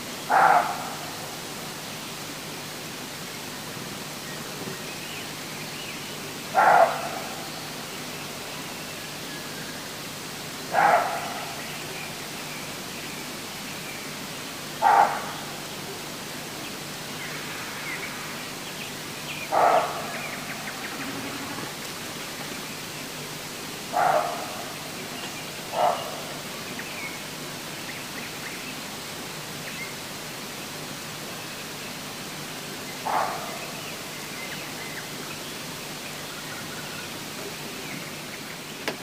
Каптаруны, Беларусь - The Roe
The roe is screaming in nearby Sleepy Hollow
collection of Kaptarunian Soundscape Museum